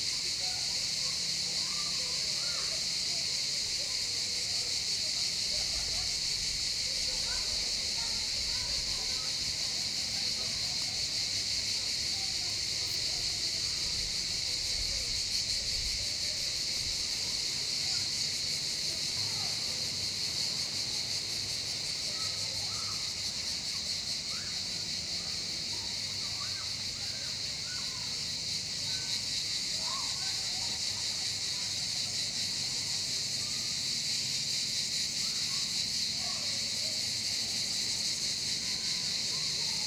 Villa and Botanic Garden, Karasan (by Alushta Uteos), Crimea, Ukraine - botanic garden, above the sea, cicades & waves
Sitting above the beach, listening to the cicades, a rinse and seawaves with the zoom recorder. svetlana introduces the villa / castle, afar kids play in the water.
karasan, once a village, got sourrounded by a sanatorium complex built in the 60ies. the about 80 former citizens at the time were resettled to other towns when the whole area was sold to an infamous gas-company. only two residents remained protesting. they are still there. we live here, inmidst a forest from pine, bamboo, cypresses, olives and peaches with that 86-year-old woman in a pretty hut.
don't mind the broken windows of the sanatorium, it is still intact, old lung-patients dry their self-caught fish. the soviet sport-site falling apart in the sun it looks like greek ruins from centuries ago - the tourists are dying out.